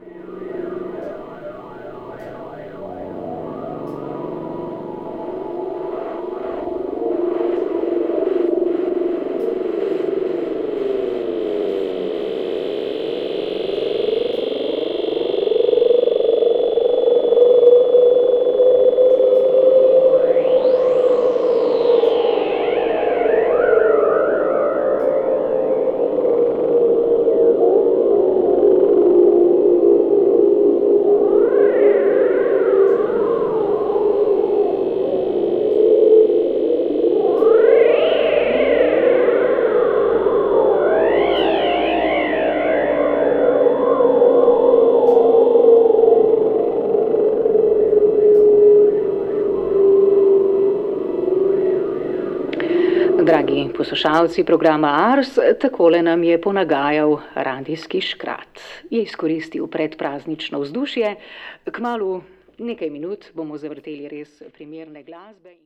corridors, mladinska - radio dwarf
after more then a minute of this interesting sound curves speaker explained this was radio dwarf